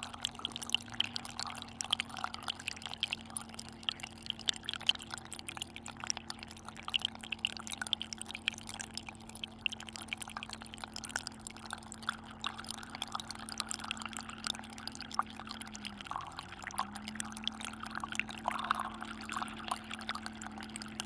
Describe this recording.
A small stream, during a very low tide on the beach of Loix. Just near is fort du Grouin, an old bunker converted to a house now.